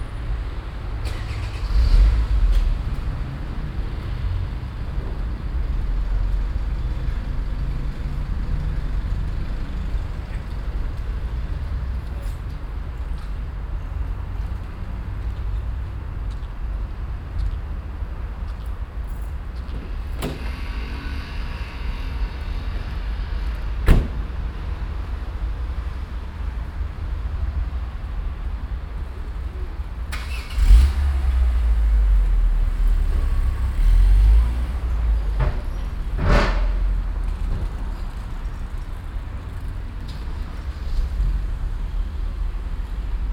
{
  "title": "cologne, neusser strasse, tankstelle",
  "date": "2008-08-19 10:38:00",
  "description": "morgens an einer tankstelle, an - und abfahrende fahrzeuge, schritte, das schlagen türen, betrieb der zapfsäulen\nsoundmap nrw: topographic field recordings & social ambiences",
  "latitude": "50.96",
  "longitude": "6.95",
  "altitude": "48",
  "timezone": "Europe/Berlin"
}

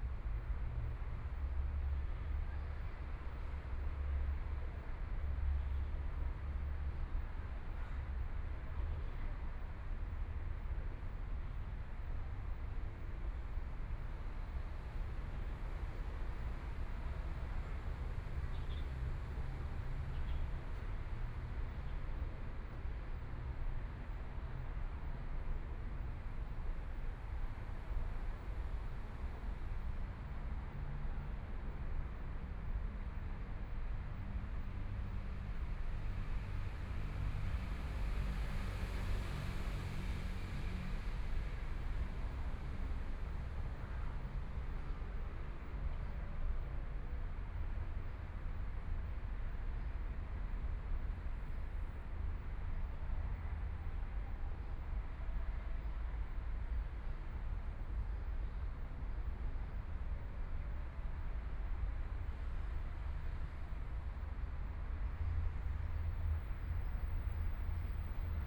{
  "title": "Taipei City, Taiwan - Under the tree",
  "date": "2014-02-28 17:45:00",
  "description": "Under the tree, Environmental sounds, Traffic Sound\nPlease turn up the volume a little\nBinaural recordings, Sony PCM D100 + Soundman OKM II",
  "latitude": "25.07",
  "longitude": "121.53",
  "timezone": "Asia/Taipei"
}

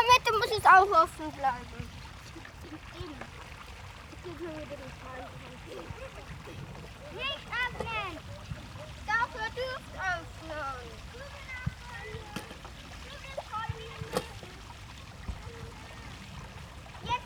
{
  "title": "Weikerlseestraße, Linz, Austria - Children direct and play with water flows. Its intense",
  "date": "2020-09-05 15:02:00",
  "description": "This hillside has been constructed to channel water downwards from a pumped source at the top. The channels can be blocked by small sluice gates that dam the water behind them. Children get really serious about controlling the water flow, lifting the gates to send it in different directions, waiting for enough to build up before releasing to the next level and planning moves into the future. Arguments over what to do and who is to do it, get pretty heated. So adult.",
  "latitude": "48.26",
  "longitude": "14.36",
  "altitude": "249",
  "timezone": "Europe/Vienna"
}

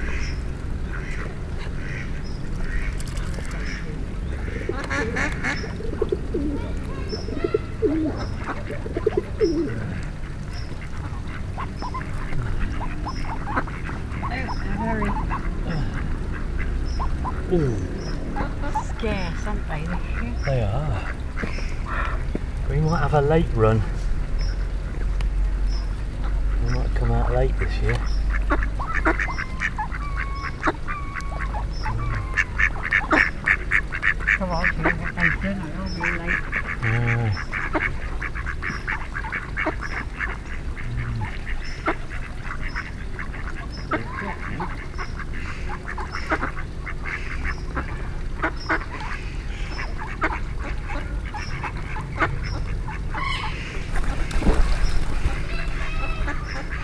{
  "title": "The Unexpected, Priory Park, Malvern, UK - Park",
  "date": "2019-07-04 10:14:00",
  "description": "I was recording the ducks and pigeons by the fence around the lake when this couple strode up very close. They ignored me and the mics etc, spoke and strode off as if I didn't exist. it's not a classic event but people are my favourite wildlife subjects.\nMixPre 3 with 2 x Beyer Lavaliers. Incidentally these Beyer MCE 5 mics are very old, not made any more but have an excellent sound. Sadly one is ailing with a reduced output but they seem impervious to heavy rain. I plan to replace them with DPA 4060s when the funds become available.",
  "latitude": "52.11",
  "longitude": "-2.33",
  "altitude": "114",
  "timezone": "Europe/London"
}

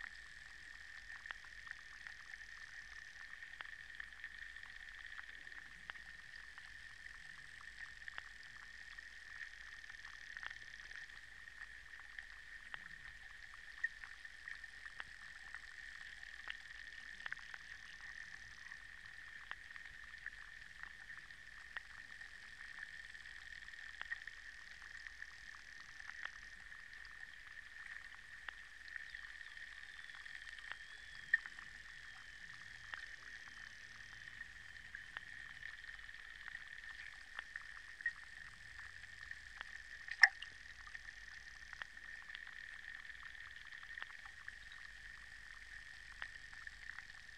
{
  "title": "Vyzuoneles. Lithuania, underwater activity",
  "date": "2016-07-26 15:10:00",
  "description": "underwater activity in a pond just right after rain",
  "latitude": "55.53",
  "longitude": "25.55",
  "altitude": "92",
  "timezone": "Europe/Vilnius"
}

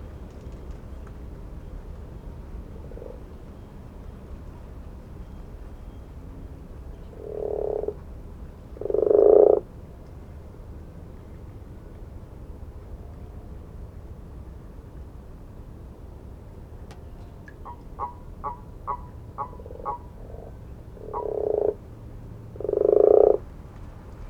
{
  "title": "at My Garden Pond, Malvern, Worcestershire, UK - Pond",
  "date": "2019-03-13 04:00:00",
  "description": "I have no idea what species the frogs or toads are. They arrive every year at this time and call for much of the day and night while remaining completely invisible. There is one call by a donkey from a farm about a mile away and a few distant owls from the wooded eastern side of the Malvern Hills. Thankfully it was a calm night with just a few gusts and hardly any cars. A wind chime is heard very faintly from somewhere in the street. I enjoy the distant jet planes.",
  "latitude": "52.08",
  "longitude": "-2.33",
  "altitude": "122",
  "timezone": "Europe/London"
}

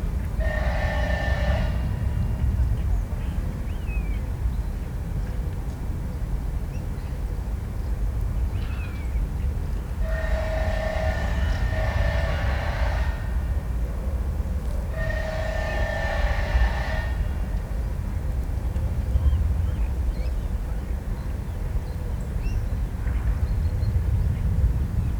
{
  "title": "Warta river embankment, Srem - saw snarls",
  "date": "2018-09-01 10:00:00",
  "description": "recorded by the river, bit outside of the city. someone working with a saw or a grinder on the other side of the river. distant traffic from the bridge (Roland r-07 internal mics)",
  "latitude": "52.09",
  "longitude": "17.03",
  "altitude": "62",
  "timezone": "GMT+1"
}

